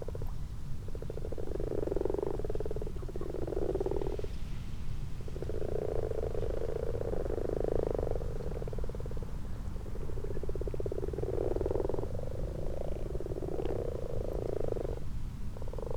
Malton, UK - frogs and toads ...
common frogs and common toads ... xlr sass on tripod to zoom h5 ... time edited unattended extended recording ...
Yorkshire and the Humber, England, United Kingdom, 12 March 2022, 23:10